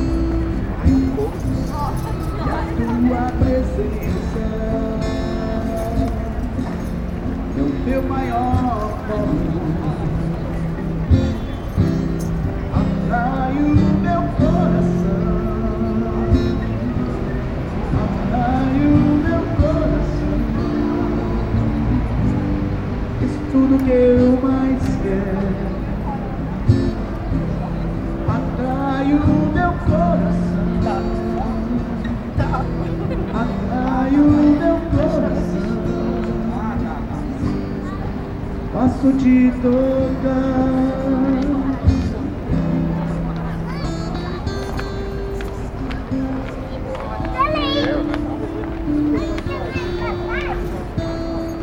Religioso - Centro, Londrina - PR, Brasil - Calçadão: Religioso
Panorama sonoro gravado no Calçadão de Londrina, Paraná.
Categoria de som predominante: antropofonia (músicos de rua evangélico, veículos e vozes).
Condições do tempo: ensolarado.
Data: 06/08/2016.
Hora de início: 10:26
Equipamento: Tascam DR-05.
Classificação dos sons
Antropofonia:
Sons Humanos: Sons da Voz; Canto; Fala.
Sons da Sociedade: Músicas; Instrumentos Musicais; Músico de Rua; Festivais Religiosos.
Sons Mecânicos: Máquina de Combustão Interna; Automóveis.
Sound panorama recorded at the Calçadão in Londrina, Paraná.
Predominant sound category: antropophony (musicians of evangelical street, vehicles and voices).
Weather conditions: sunny.
Date: 08/08/2016.
Start time: 10:26
Hardware: Tascam DR-05.
Human Sounds: Voice Sounds; Corner; Speaks.
Sounds of the Society: Music; Musical instruments; Street Musician; Religious Festivals.
Londrina - PR, Brazil